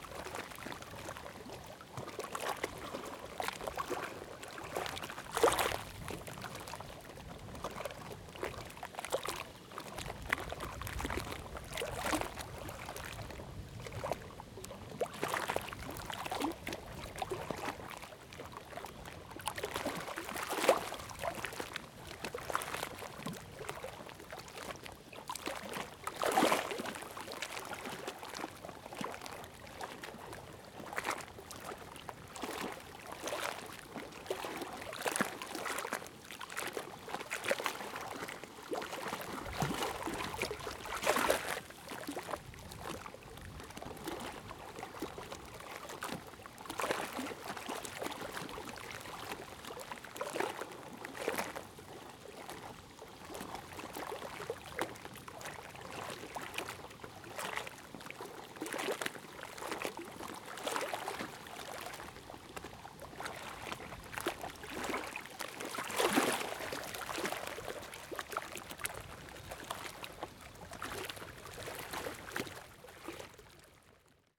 Recorded on a Zoom H4n internal mics.
The wind was pushing the water onto the rocks at the side of the road, and also rustling the reeds nearby to the right.